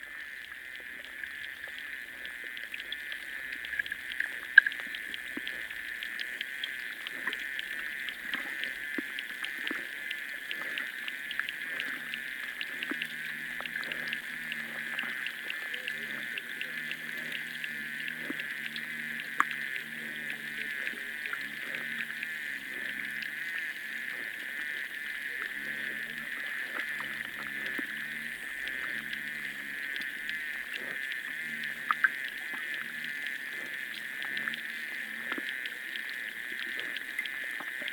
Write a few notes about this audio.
Hydrophone in the water near the shore of Sartai lake. In the begining it even catches the sound of some grass cutter machine nearby....